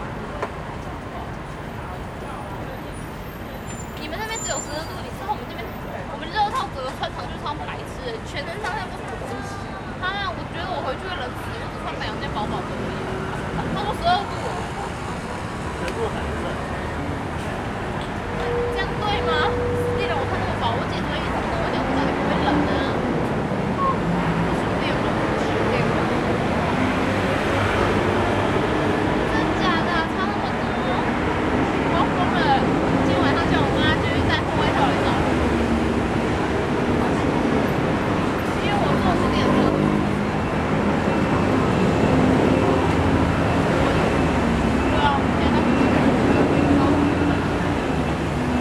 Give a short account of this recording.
in front of the Bus stop, One girl is using the phone with a friend complained the weather is very hot, Sony ECM-MS907, Sony Hi-MD MZ-RH1